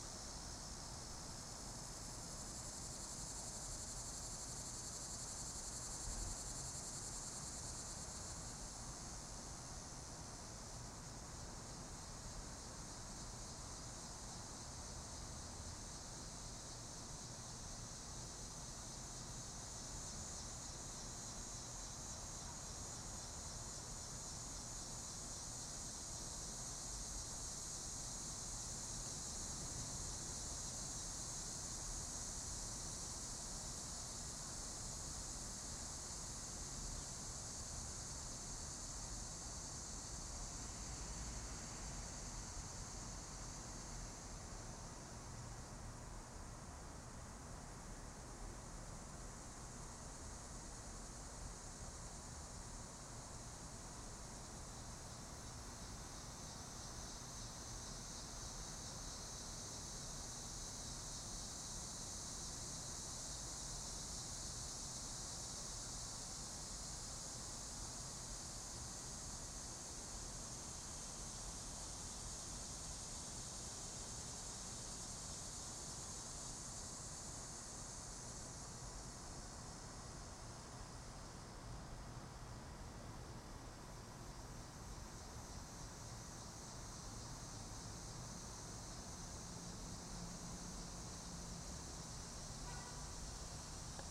A four sided arched brick enclosure in a courtyard, facing North East.
Maryland, USA, 3 September 2019